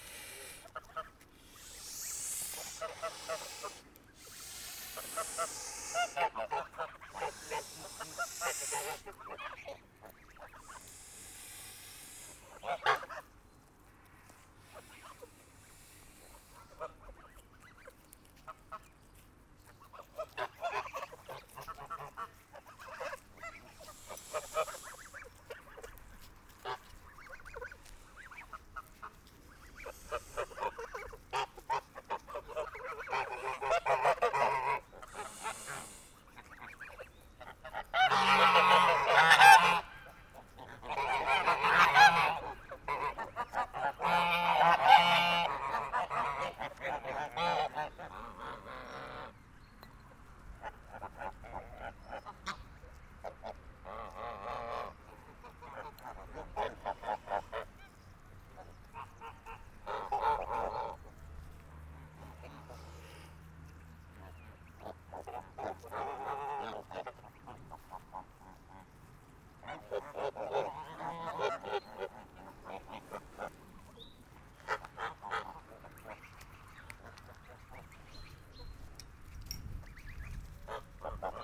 1348 Ottignies-Louvain-la-Neuve - Angry geese
During the time I pick up beer capsules for my neighboor, who is collecting this kind of objects, a huge herd of geese is coming to see me. The birds are very angry : fshhhhhh they said !
Ottignies-Louvain-la-Neuve, Belgium, 15 July 2017, ~08:00